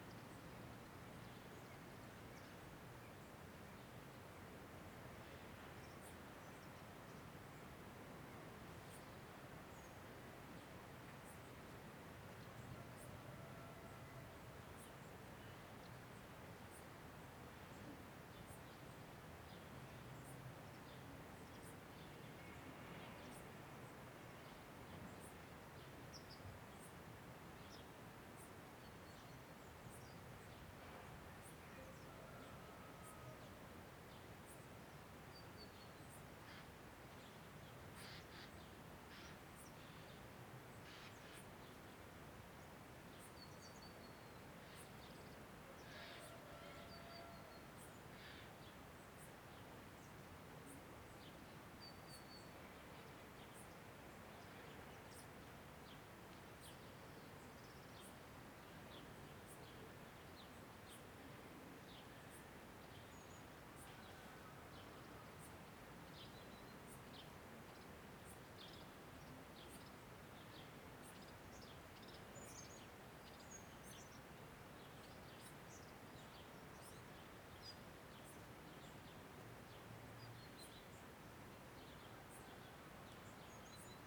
Molini di Triora IM, Italien - Molini di Triora, Via Case Soprane - In the morning
[Hi-MD-recorder Sony MZ-NH900, Beyerdynamic MCE 82]